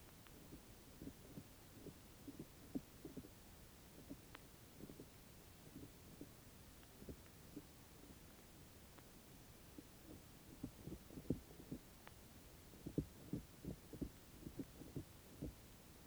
Bourguignons, France - Mole digging
This strange recording is simply a mole digging a tunnel. I saw a mound moving, so walking very cautiously, I put a contact microphone into the mound... and I heard it was working. Great ! As this, you can hear it digging (very deaf small sound) and after pushing the clay outside (noisy clay movements). And again and again and again. At the end of the recording, the microphone made a jump into the mound, collapsing !
2 August 2017